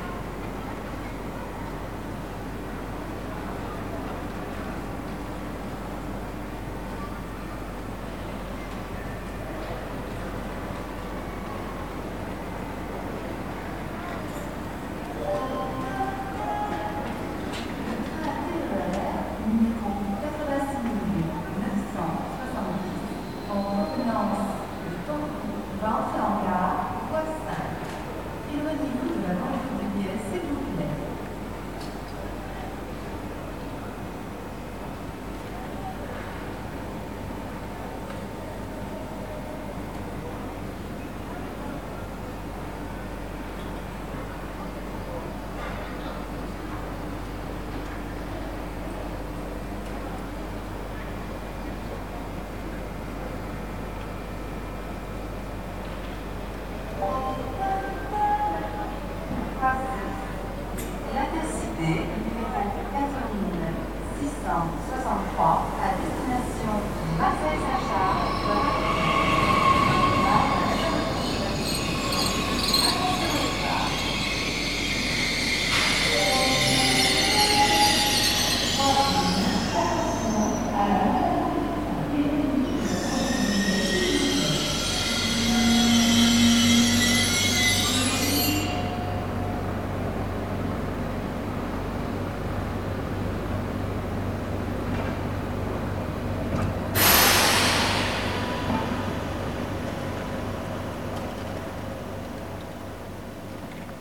Boulevard de Marengo, Toulouse, France - SNCF station atmosphere
Train, engine, SNCF station atmosphere, Corona Virus Message
Captation : Zoom h4n
France métropolitaine, France